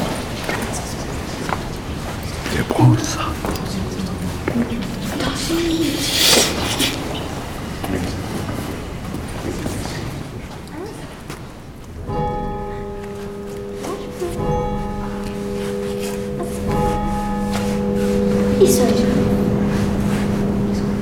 Autheuil, France - Eglise d'Autheuil
Enfants à l'intérieur de l'église, cloches, tempête à l'extérieur.